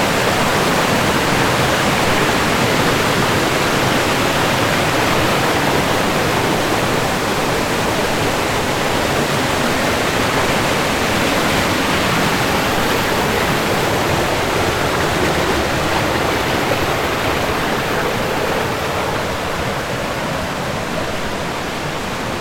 Plitvički Ljeskovac, Croatie - Plitvice lakes
Water falls, Plitvice lakes, Croatia, Zoom H6
Ličko-senjska županija, Hrvatska, 20 July 2019, ~12:00